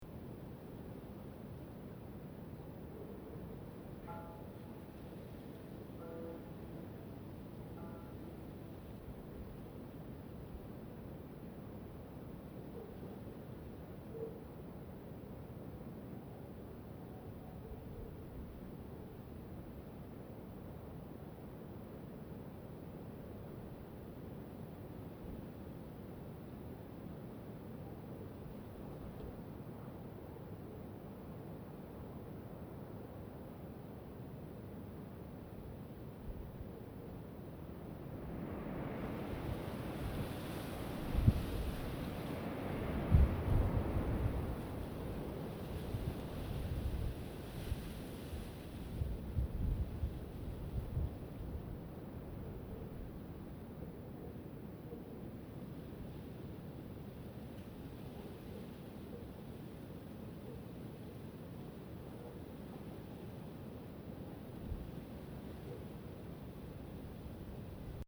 Inverno - Paradela, Salto de Castro Mapa Sonoro do rio Douro Winter soundscape in Paradela, Portugal Douro River Sound Map